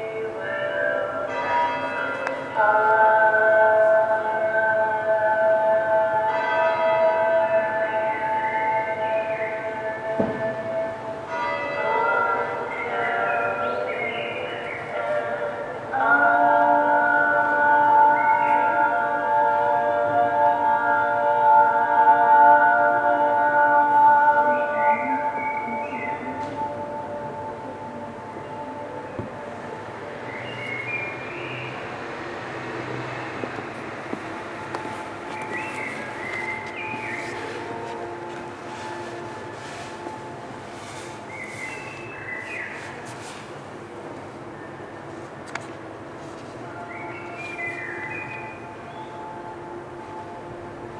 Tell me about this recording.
At the backyard of the historical Stdthuis - the sound of a sound installation by Liew Niyomkarn entitled "we will echo time until the end of it" - part of the sound art festival Hear/ Here in Leuven (B). international sound scapes & art sounds collecion